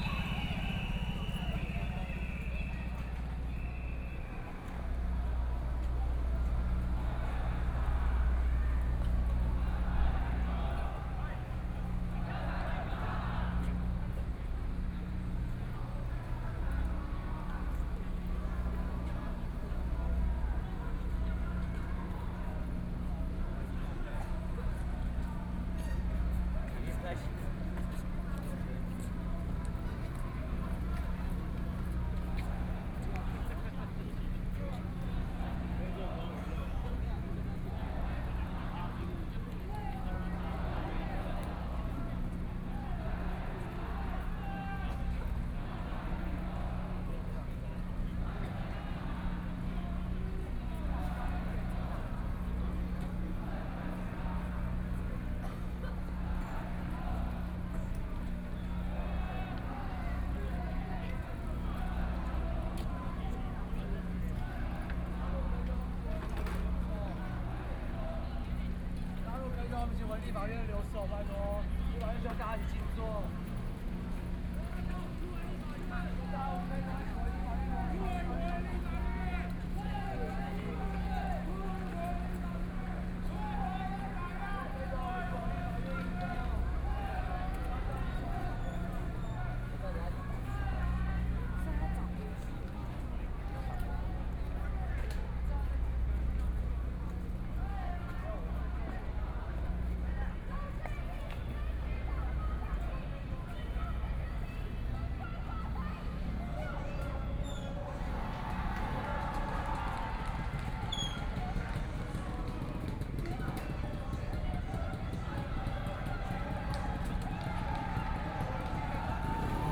Zhongxiao E. Rd., Taipei City - Students and people flee
Riot police in violent protests expelled students, All people with a strong jet of water rushed, Riot police used tear gas to attack people and students, Students and people flee